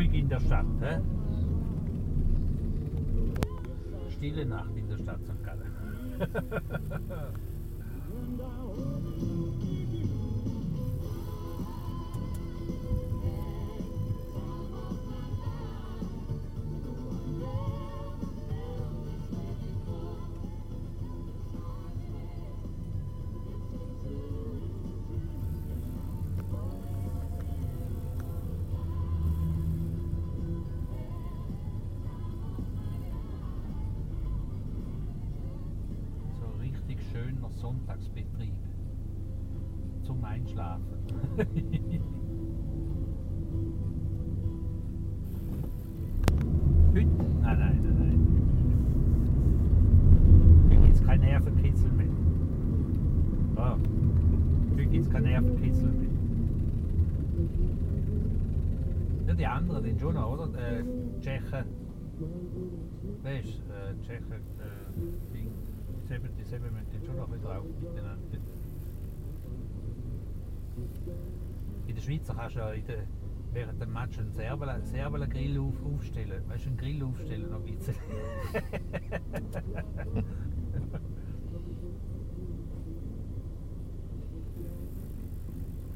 taxi driver commenting his sunday work and the atmosphere of soccer fans in his city. recorded june 15, 2008. - project: "hasenbrot - a private sound diary"
Saint Gallen, Switzerland